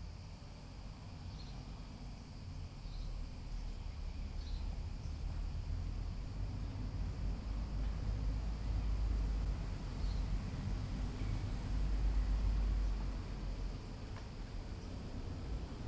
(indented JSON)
{
  "title": "Livadia, Andros, Greece - Church square at midday",
  "date": "2019-07-02 13:00:00",
  "description": "In the small square in front of the church, right by the road that ascends through the village. It is very quiet - only occasional cars and motorbikes pass by.",
  "latitude": "37.82",
  "longitude": "24.93",
  "altitude": "59",
  "timezone": "Europe/Athens"
}